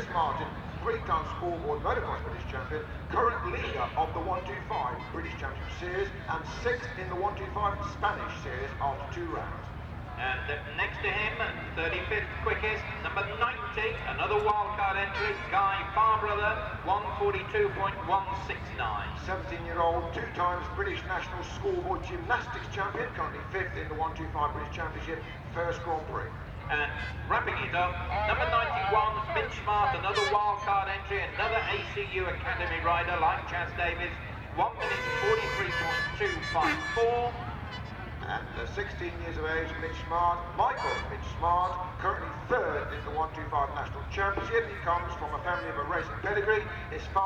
125cc motorcycle race ... part one ... Starkeys ... Donington Park ... race and associated noise ... Sony ECM 959 one point stereo mic to Sony Minidisk ...